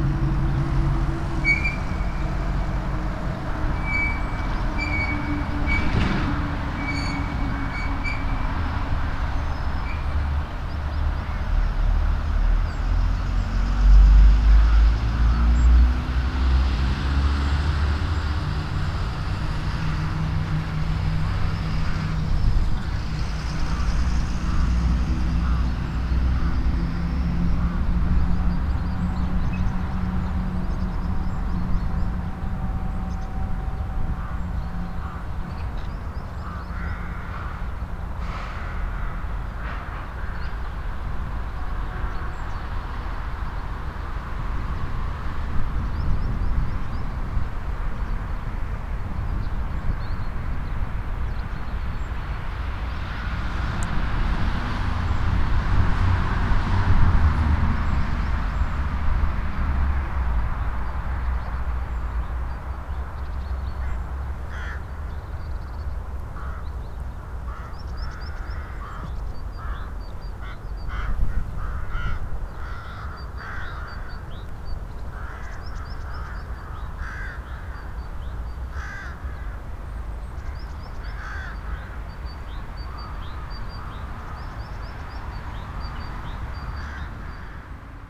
{"title": "Poznan, Mateckiego street. city limits - field", "date": "2016-02-18 12:23:00", "description": "short stop on a nearby field. caws of a flock of crows reverberate here nicely. a turbo-propeller plane going astray. noisy street behind me. some construction close among the buildings. (sony d50)", "latitude": "52.46", "longitude": "16.90", "altitude": "97", "timezone": "Europe/Warsaw"}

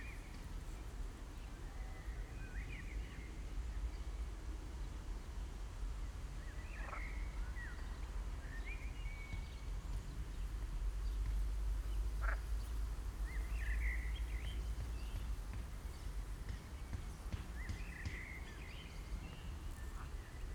{"title": "Wuhle, Ahrensfelde, Deutschland - residential area, pond ambience", "date": "2015-05-23 15:45:00", "description": "the river Wuhle near its source in Ahrensfelde, just beyond the city border of Berlin. The river is almost invisible here, no flow, just a few wet areas and ponds.\n(SD702, DPA4060)", "latitude": "52.58", "longitude": "13.58", "altitude": "56", "timezone": "Europe/Berlin"}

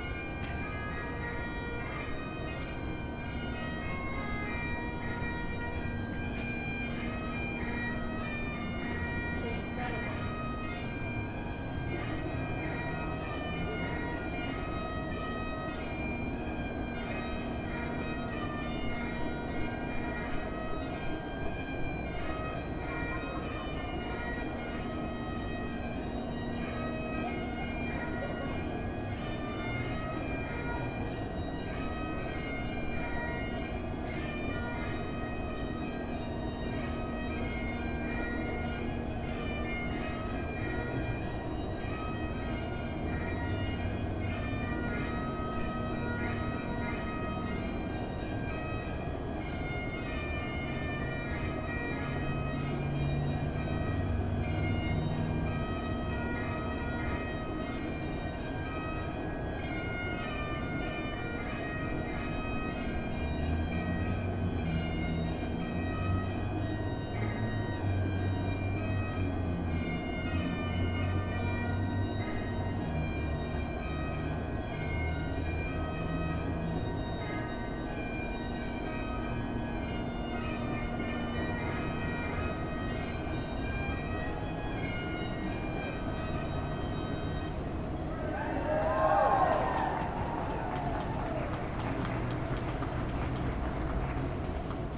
{"title": "Killarney Rd, Toronto, ON, Canada - Distanced Birthday Party Bagpiper", "date": "2020-04-10 16:45:00", "description": "A family couldn't all be together as usual at a grandfather's birthday party, because of worries about spreading Covid-19, so his children hired a bagpiper to play outside. The rest of the family was outside on the street with the piper. (Recorded with Zoom H5.)", "latitude": "43.70", "longitude": "-79.41", "altitude": "167", "timezone": "America/Toronto"}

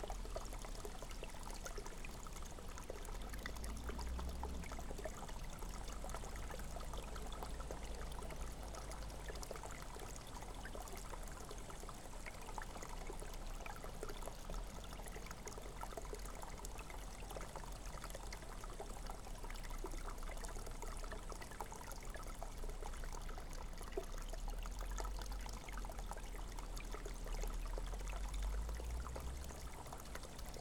{"title": "holy spring, Uzpaliai, Lithuania", "date": "2016-08-19 14:55:00", "description": "so called \"holy spring\" with \"healing\" water", "latitude": "55.63", "longitude": "25.56", "altitude": "97", "timezone": "Europe/Vilnius"}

